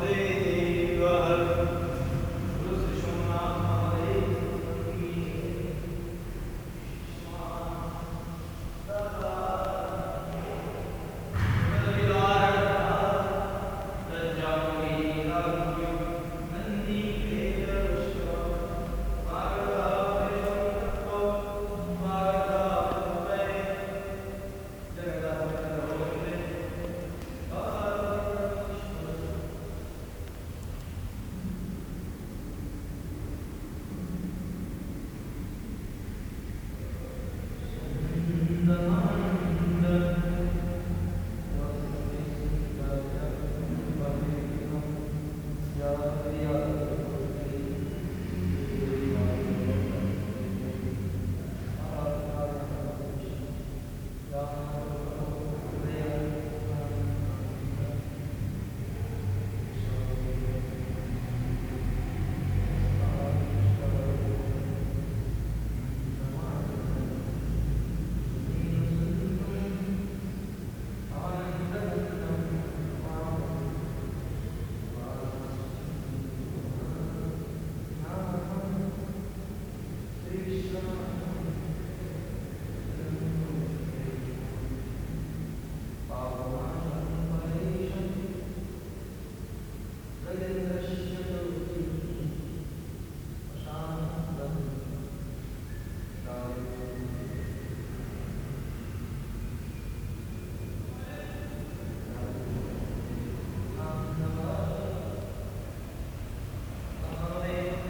Shiva Temple, Nakasero Hill, Kampala, Uganda - Morning offerings...
The early morning hum of Kampala resonates in the dome of the temple, the city market is buzzing in the streets all around, but here inside, the priest is following his routine of morning offerings and prayers… people are dropping in on the way to work, or to the market, walk around from altar to altar, praying, bringing food offerings, ringing a bell at each altar…
11 July, 07:10